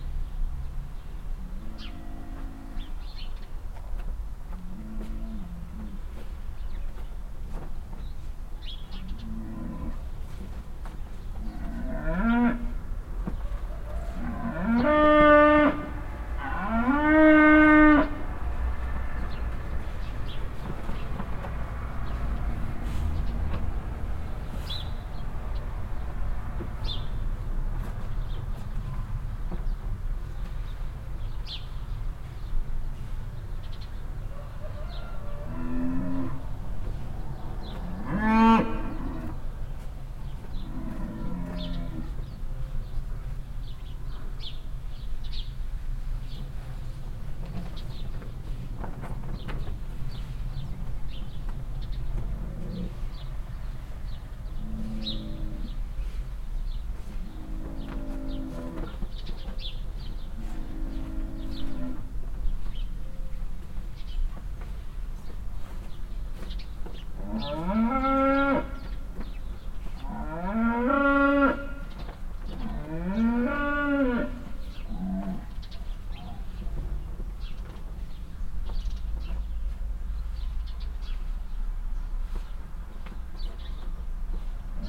heiderscheid, farmstead
At a farmstead nearby a willow.
A constantly loud mooing cow and moves behind a bush. Other cows answer more silently. Cars passing by in the nearby street, birds chirping and a rooster call.
Heiderscheid, Bauernhof
Auf einem Bauernhof neben einer Weide. Eine Kuh muht ständig und laut hinter einen Busch. Andere Kühe antworten etwas leiser. Autos fahren auf der nahe gelegenen Straße vorbei, Vögel zwitschern und ein Hahn kräht.
Heiderscheid, éolienne
A côté d’une éolienne, un matin d’été venteux. Le bruit continu d’un générateur à l’intérieur et le bruit du mouvement régulier des pales de l’éolienne. Dans le lointain, on entend le trafic sur la route proche
Project - Klangraum Our - topographic field recordings, sound objects and social ambiences
Heiderscheid, Luxembourg, August 2011